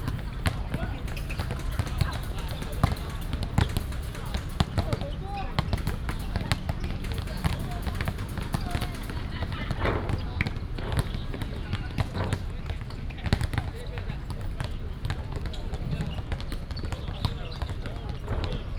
Ln., Sec., Zhongshan N. Rd., 淡水區正德里 - Next to the basketball court
Next to the basketball court, Traffic Sound